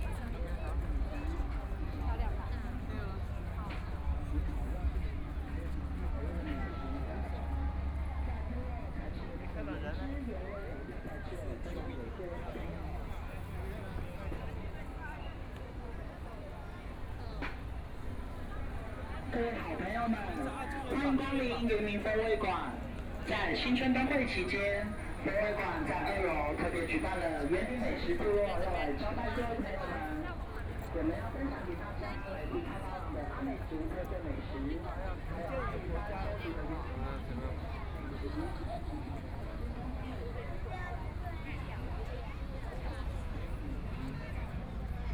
中山美術公園, Taipei City - Walking through the park
First Full Moon Festival, Traffic Sound, A lot of tourists
Please turn up the volume
Binaural recordings, Zoom H4n+ Soundman OKM II